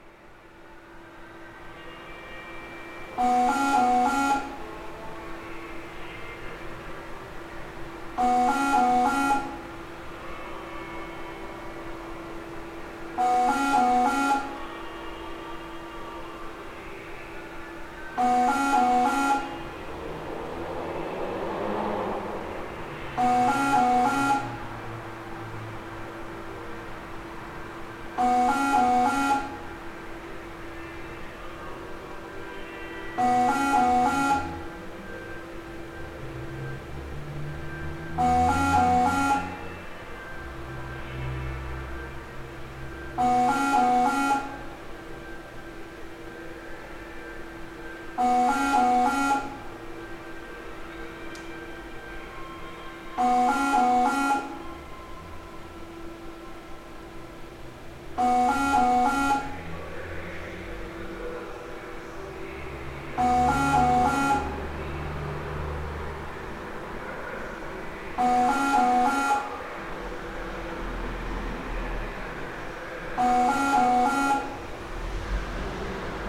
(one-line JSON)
{"title": "Kaunas, Kaunas bus station, Kaunas, Lithuania - Reverse vending machine in an underground carpark", "date": "2021-04-22 21:16:00", "description": "Reverse vending machine located in an underground carpark, located underneath the Kaunas bus station. Apparently stuck or broken, it keeps repeating a constant \"not working\" sound signal. Recorded with ZOOM H5.", "latitude": "54.89", "longitude": "23.93", "altitude": "30", "timezone": "Europe/Vilnius"}